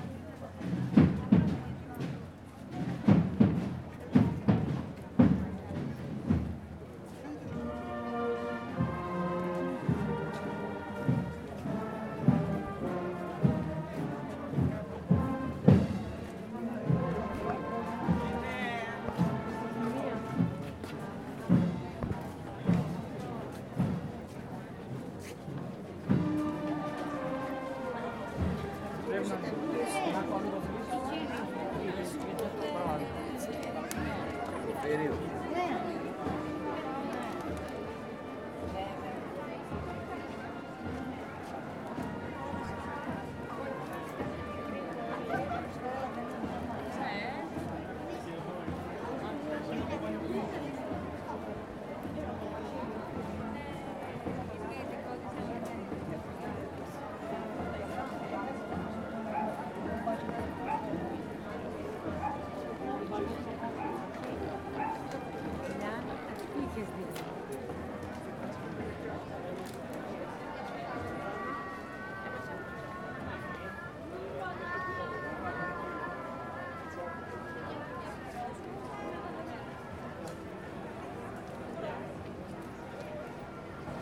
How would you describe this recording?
Anniversary of the Vision of Saint Pelagia. People talking on the street while waiting for the litany of Saint Pelagia to approach. While it approaches and it goes by, we hear the band playing wind instruments and percussion and then we hear again people talking. Recorded with Zoom by the soundscape team of E.K.P.A. university for KINONO Tinos Art Gathering.